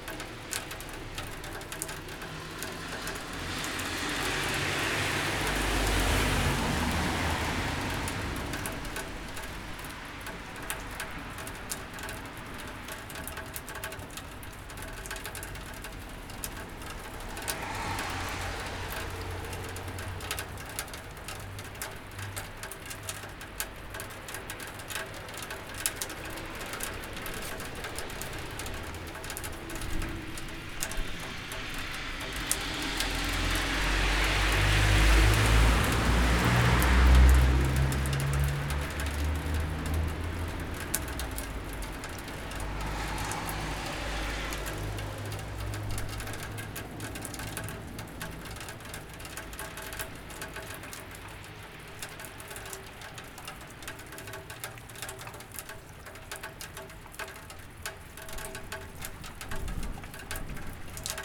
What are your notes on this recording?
Snow is melting. Water dripping from the gutter pipe. Few cars passing by through empty street.